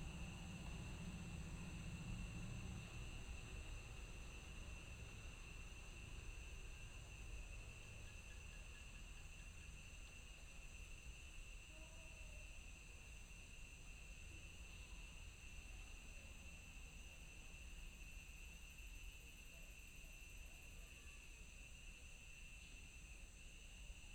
碧雲寺竹林生態池, Hsiao Liouciou Island - In the Park
In the Park, Sound of insects
Liouciou Township, Pingtung County, Taiwan, 1 November 2014